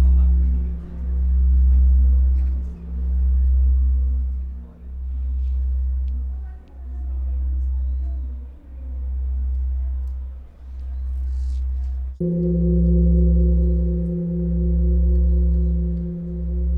Disturbing the Peace
Ringing the great bronze bell at the Demilitarized Zone Peace Park...for 10,000won myself and Alfred 23 Harth rang the bell for peace on the peninsular...the great resonant sound traveling North over the border as a gesture of longing